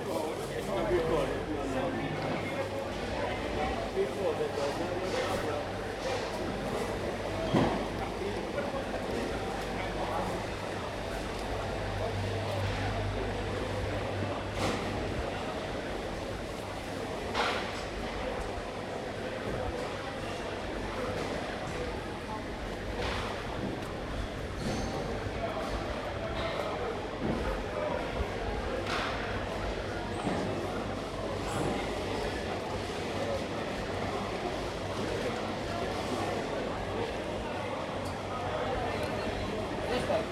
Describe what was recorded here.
Walking around the market with a Zoom stereo mic, includes sounds of traders, porters, customers and crabs on polystyrene boxes.